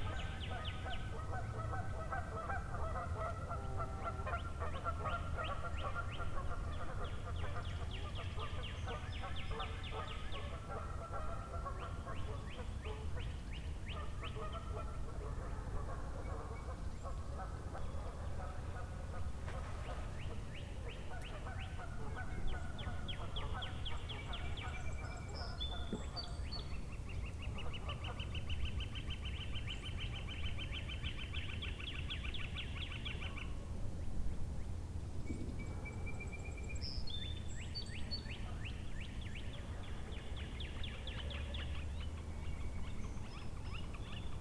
River Rd, Linn Grove, IN, USA - Wabash River sounds, River Road, near Linn Grove, IN
Wabash River sounds, River Road, Linn Grove, IN